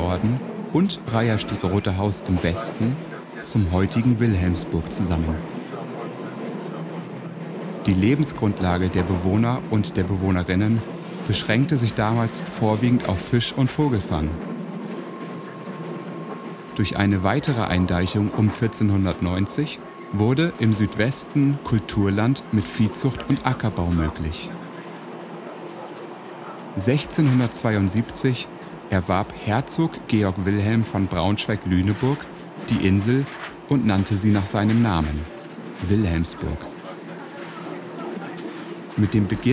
Fahrt der S-3 vom Hamburger Hauptbahnhof nach Wilhelmsburg sowie Exkurs Wilhelmsburg.